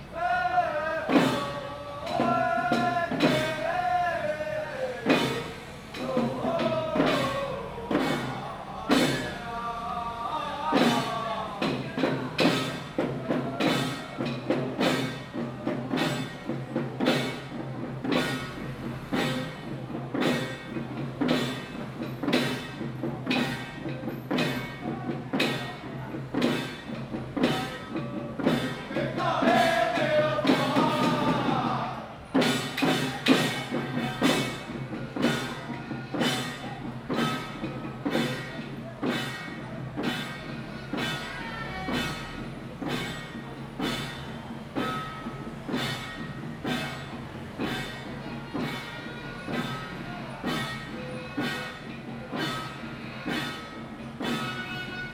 Daren St., Tamsui Dist., 新北市 - Traditional troupes
Traditional festival parade, Traditional troupes
Zoom H2n MS+XY